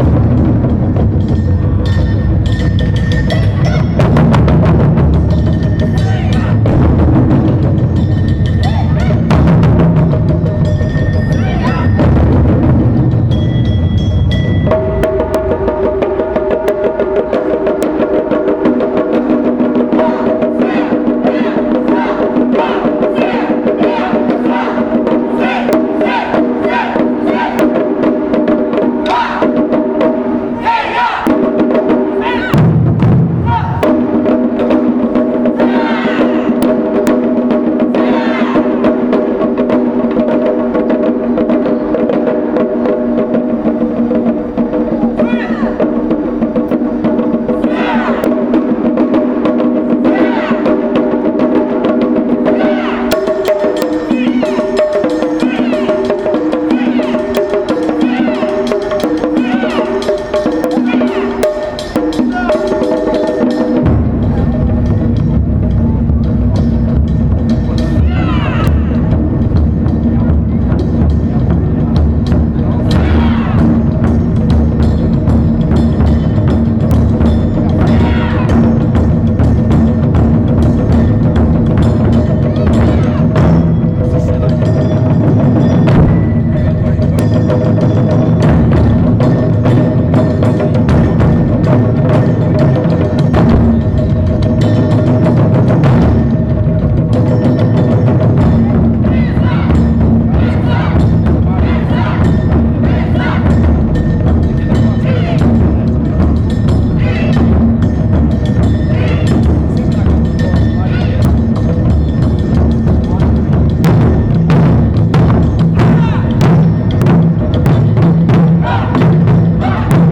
Taikos - Centro, Londrina - PR, Brasil - Calçadão: Cultura Japonesa
Panorama sonoro gravado no Calçadão de Londrina, Paraná.
Categoria de som predominante: antropofonia (músicos de rua, veículos e vozes).
Condições do tempo: ensolarado.
Data: 06/08/2016.
Hora de início: 10:44.
Equipamento: Tascam DR-05.
Classificação dos sons
Antropofonia:
Sons Humanos: Sons da Voz; Grito; Canto; Fala; Sons do Corpo; Palmas.
Sons da Sociedade: Músicas; Instrumentos Musicais; Banda e Orquestras.
Sound panorama recorded at the Calçadão in Londrina, Paraná.
Predominant sound category: antropophony (street musicians, vehicles and voices).
Weather conditions: sunny.
Date: 08/08/2016.
Start time: 10:44.
Human Sounds: Sounds of the Voice; Shout; Corner; Speaks; Sounds of the Body; Palms
Sounds of Society: Music; Musical instruments; Band and Orchestras.